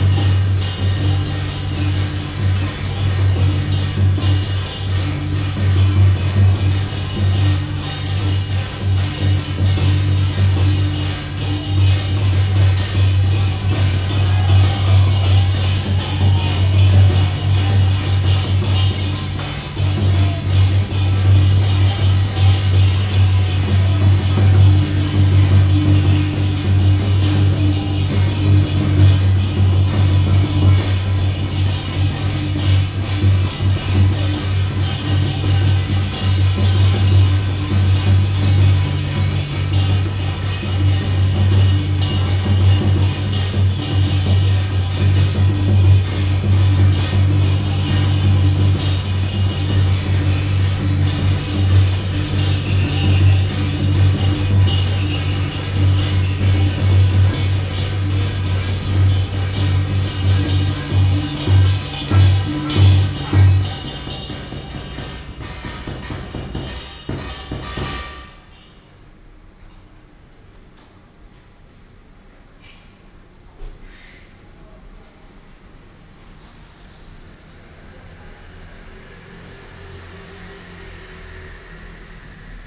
大仁街, Tamsui District, New Taipei City - temple fair
Traditional temple festivals, Firecrackers sound, temple fair
Tamsui District, New Taipei City, Taiwan, 22 April 2017